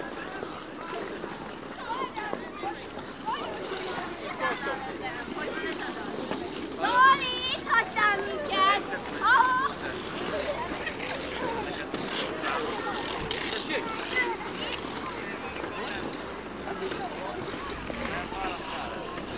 Ice-skaters on a temporary outdoor ice-rink set up for the holiday season.
Piac tér, Hungary